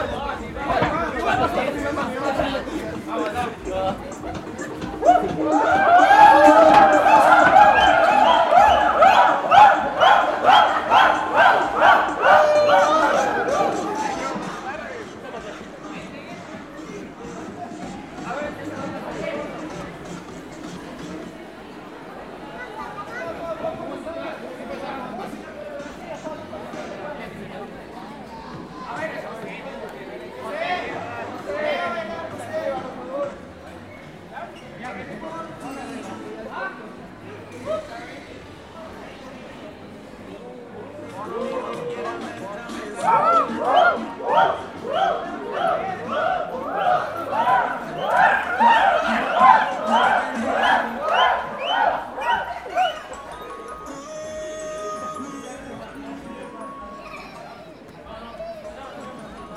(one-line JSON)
{"title": "Baños, Équateur - las viudas del ano viejo - widows", "date": "2014-12-31 17:00:00", "description": "On January 31, in all cities of Ecuador, men dress up as women to beg for money. They are widows of the year that has just passed.\nMen in this macho country dress in sexy outfit and will rub other males, block cars on the road for a few coins. All this in the game and good mood.", "latitude": "-1.40", "longitude": "-78.42", "altitude": "1805", "timezone": "America/Guayaquil"}